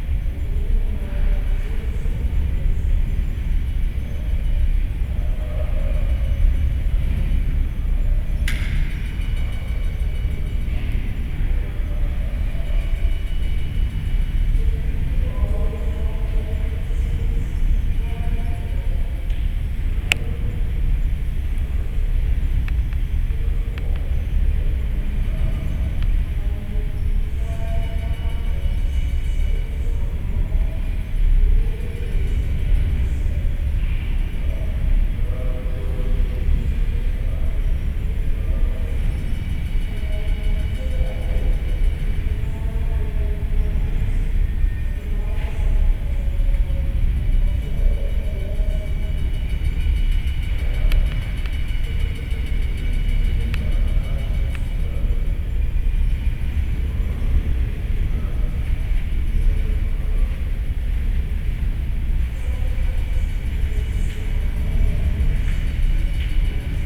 Strada Izvor, București, Romania - exhibtion ambience Causescu Palace
another ambience from the Center of Contemporary Art